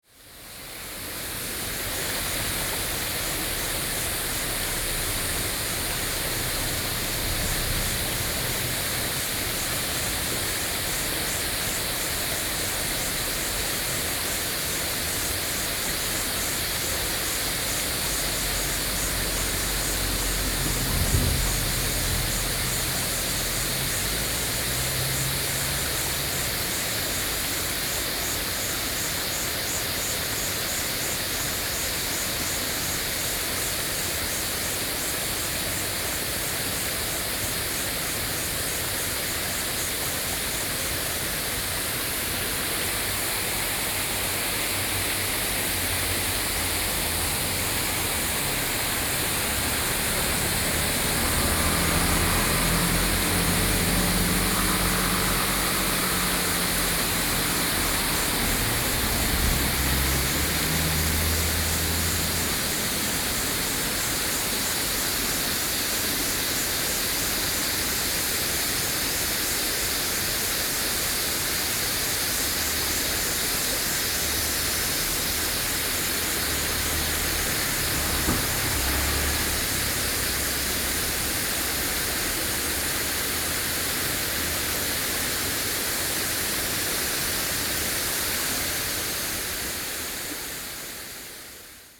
陽金公路, Taiwan - Cicadas and Stream
Cicadas and Stream, Traffic Sound
Sony PCM D50 (soundmap 20120711-50 )
New Taipei City, Taiwan